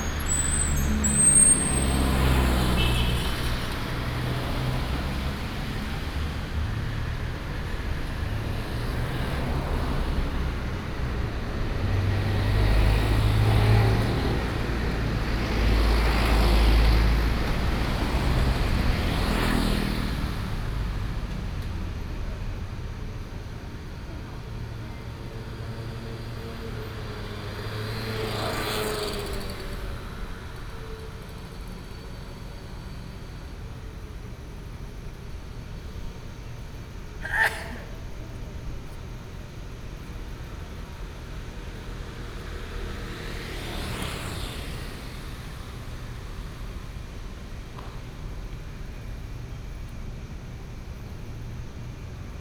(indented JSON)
{
  "title": "Zhuangjing Rd., Banqiao Dist., New Taipei City - Traffic Sound",
  "date": "2015-09-16 13:41:00",
  "description": "Traffic Sound\nBinaural recordings\nSony PCM D100+ Soundman OKM II",
  "latitude": "25.03",
  "longitude": "121.47",
  "altitude": "14",
  "timezone": "Asia/Taipei"
}